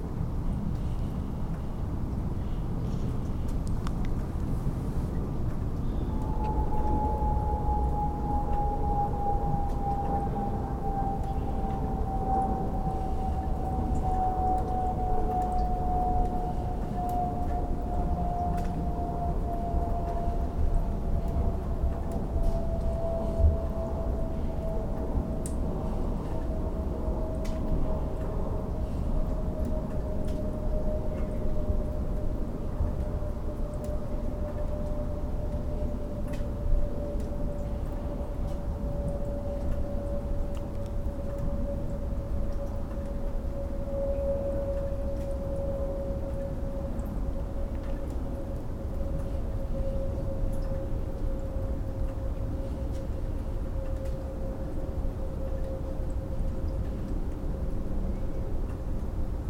Delaware Township, NJ, USA - Night time on the farm
This recording was taken from my front porch. The temperature was above freezing as you can hear the snow melting off of the roof. There was an interesting cloud coverage, visible from the city lights of Lambertville, NJ, Doylestown, PA, and the Lehigh Valley, PA. A plane went over the house in this sound clip. Toward the end of the clip, there is an interesting hum. I am not sure what the hum is from. It is not from a highway or planes. It is either the Delaware River or wind through the trees.